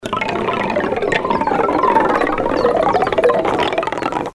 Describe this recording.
a second recording of the same location and sound object. Eine zweite Aufnahme vom selben Ort und Klangobjekt. Un deuxième enregistrement du même objet au même endroit. Und ein dritter Eindruck desselben Objekts. et une troisième impression du même objet, Projekt - Klangraum Our - topographic field recordings, sound art objects and social ambiences